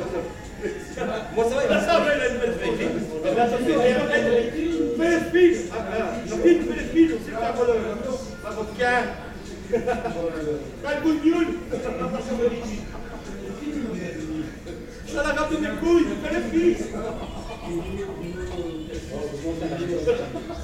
Drunk people at the local bar. How this could be painful for neighbours everyday...